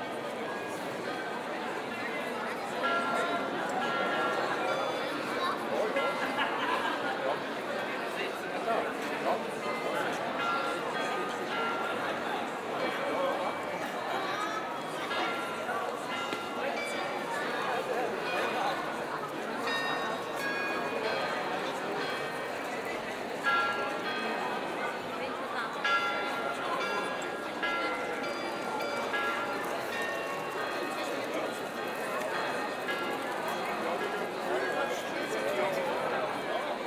Aarau, Maienzug, Bells, Schweiz - Maienzug Glockenspiel
While the people are chatting, waiting for the Maienzug, the bells of a former tower of the city play some tunes.